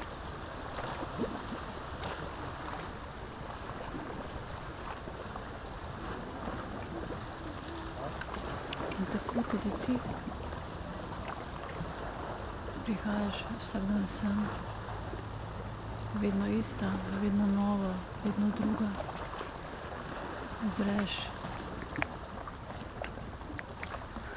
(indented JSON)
{
  "title": "pier, Novigrad, Croatia - still poem",
  "date": "2013-07-18 21:43:00",
  "description": "variation on Lepa Vida",
  "latitude": "45.31",
  "longitude": "13.56",
  "timezone": "Europe/Zagreb"
}